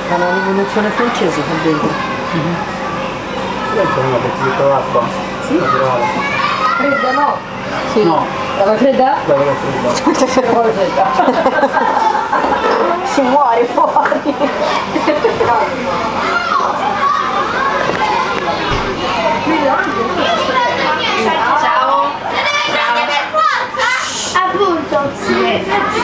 Corso Roma, Serra De Conti AN, Italia - ice-cream time
Life inside Caffè Italia: some kids arrived to eat ice-creams.
Recorded with SONY IC RECORDER ICD-PX440
Serra De Conti AN, Italy